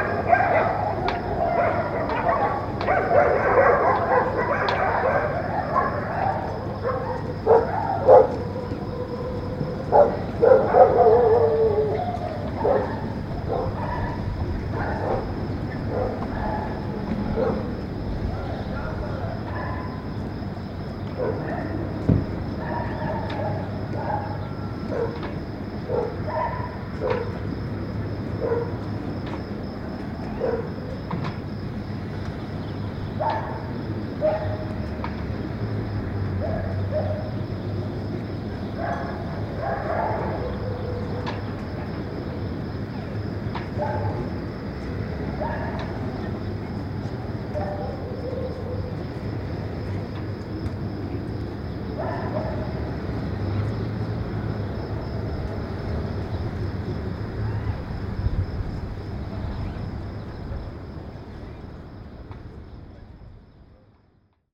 Marina Kalkan, Turkey - 915d distant dog fight
Recording of a distant dog fight in the early morning
AB stereo recording (17cm) made with Sennheiser MKH 8020 on Sound Devices MixPre-6 II.
Akdeniz Bölgesi, Türkiye, 22 September